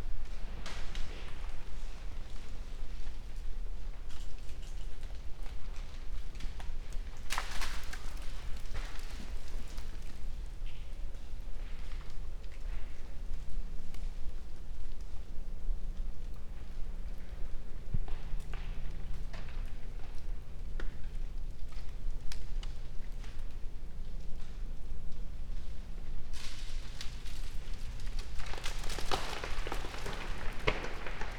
dale, Piramida, Slovenia - falling forest